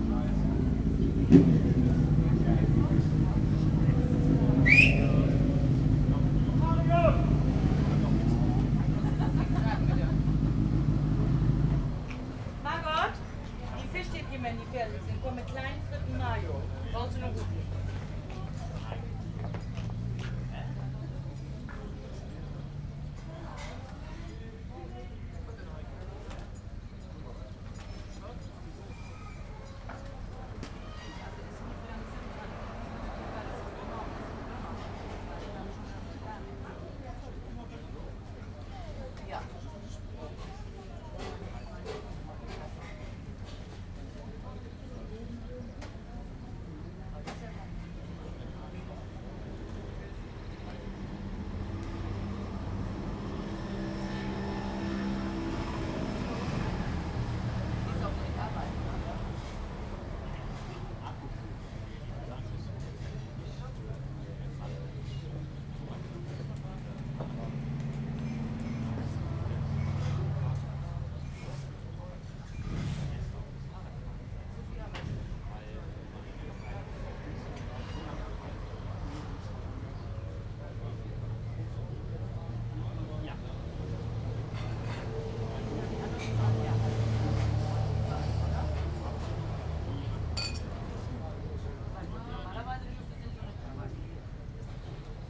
Schönenberg - Motorradtreff, Parkplatz / bikers place, parking
21.05.2009 beliebter rastplatz für motorradfahrer, insb. an wochenenden und feiertagen
popular resting place for bikers, esp. on weekends and holidays